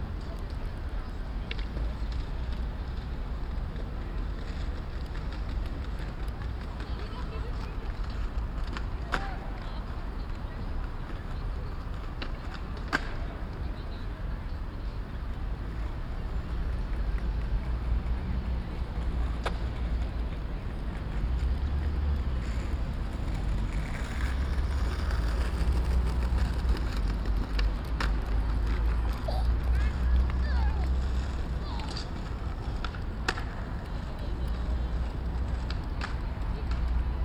Senamiestis, Vilnius, Litouwen - Skateboarding and skates
Distant traffic, a skateboard and a small kid ‘walking’ withs skates.
Binaural recording.